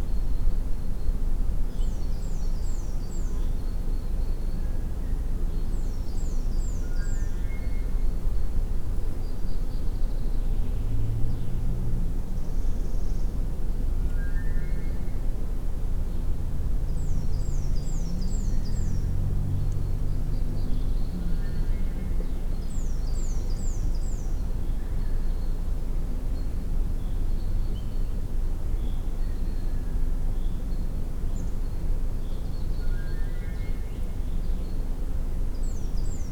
Eurovelo R10 - melody in the forest
a few rising notes sounding in the forest. besides general direction, i was not able to figure out what was the source of the melody. clearly a manmade sound but no idea what it could be. (roland r-07)